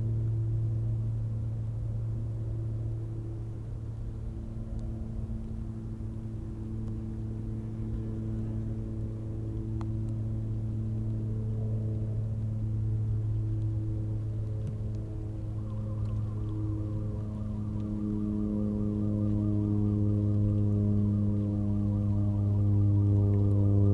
Unknown crackling in the beginning(ants?), then a powered glider starts to spin around.